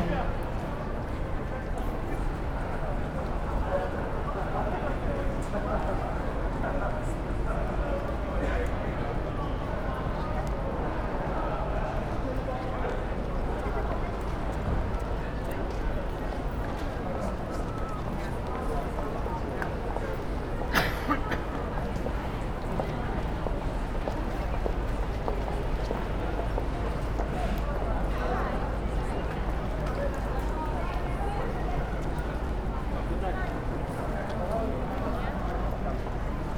St. Ann's Square, Manchester - Visiting German Christmas Market
Walking around the German Christmas market in Manchester. Voices, buskers playing Christmas Carols...